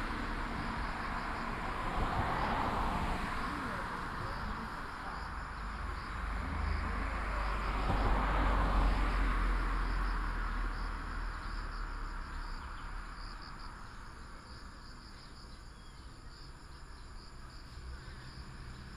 Bird call, Insect sounds, traffic sound, An old couple in the next little temple, Binaural recordings, Sony PCM D100+ Soundman OKM II
Miaoli County, Taiwan